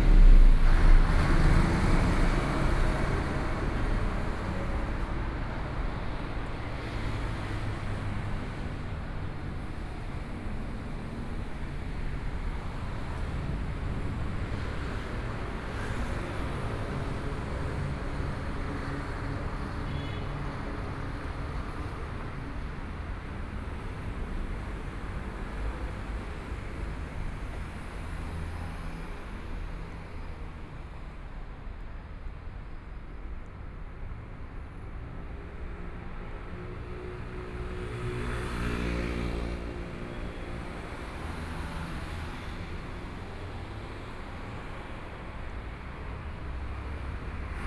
walking on the Road, Aircraft flying through, Traffic Sound
Binaural recordings, ( Proposal to turn up the volume )
Zoom H4n+ Soundman OKM II